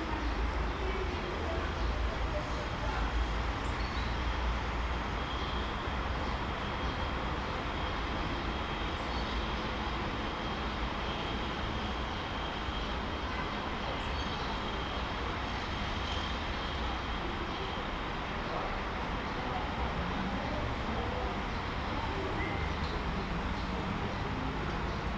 Covered outdoor space, Dnipro, Ukraine - Covered outdoor space [Dnipro]